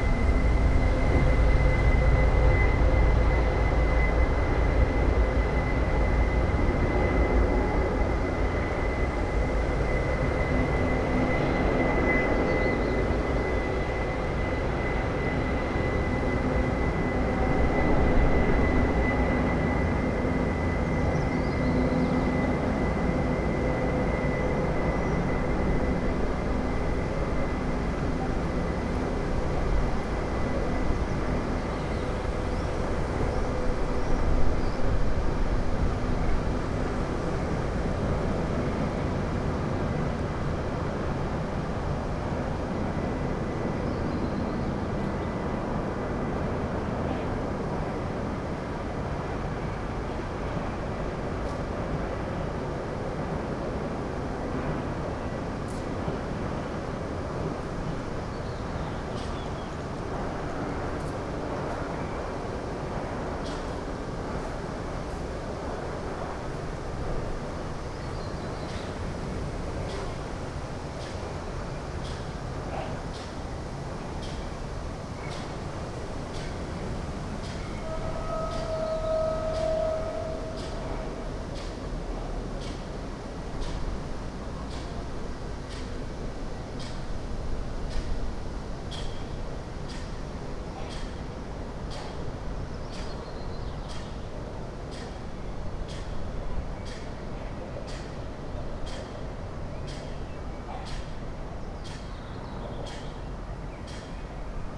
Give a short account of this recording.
Recorded at the entrance to the Center Of Advanced Technologies at the UAM campus. The place is not busy at all. Just a few people pass by and enter the building. Fright train passing in the background. Someone hitting something monotonously. Sounds like a big metalic arm of a clock. (sony d50)